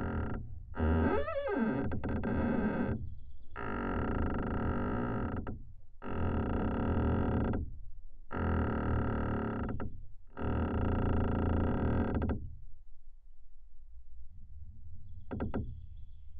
Again: recording of squeaking pine tree. Contact microphones.
Utenos apskritis, Lietuva